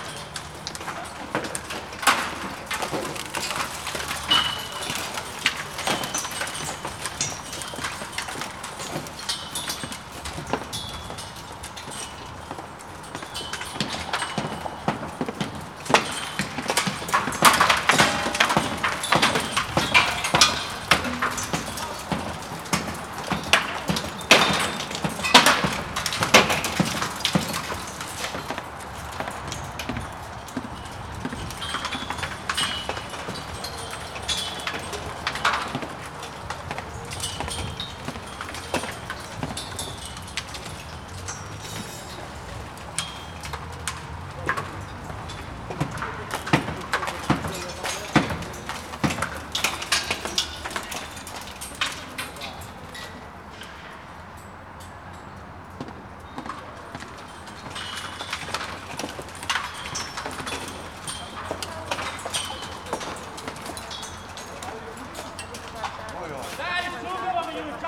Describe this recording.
construction workers peeling off the outer layer of a apartment building, pounding it with hammers and chisels. parts of the shell falling a few levels down, hitting and ringing on the scaffolding as if they were in a pachinko game. workers shouting at eachother.